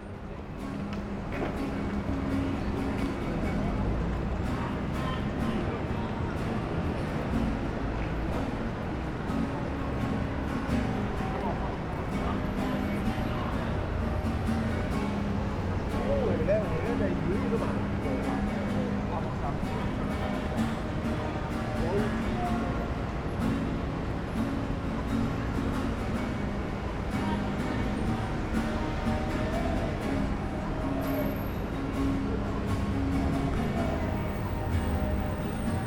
neoscenes: guitarist near the Quay